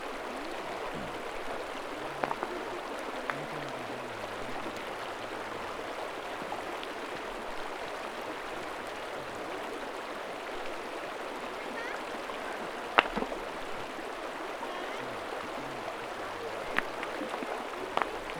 neoscenes: hanging out on Mill Creek
Gunnison, CO, USA, 4 September